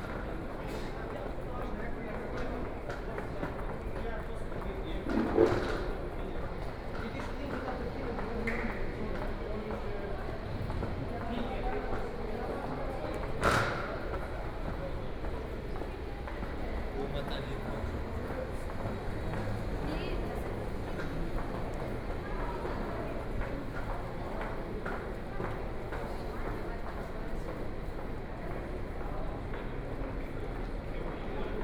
Ludwigsvorstadt-Isarvorstadt, Munich - Walking in the station
Hauptbahnhof, U Bahn, Line U5, Walking in the station
Munich, Germany, 6 May 2014